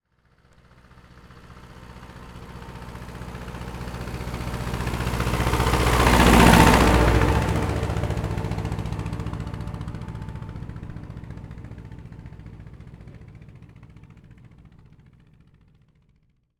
Fellheim, Deutschland - Tractor Oldtimer Driveby
Driveby of a tractor
Germany, 18 August 2012, 16:00